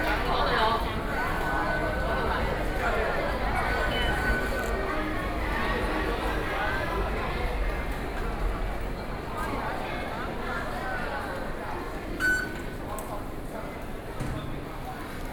Chiang Kai-Shek Memorial Hall Station, Taipei - soundwalk
Underpass, Mrt Stations, Sony PCM D50 + Soundman OKM II
中正區 (Zhongzheng), 台北市 (Taipei City), 中華民國, 14 June 2013, 21:47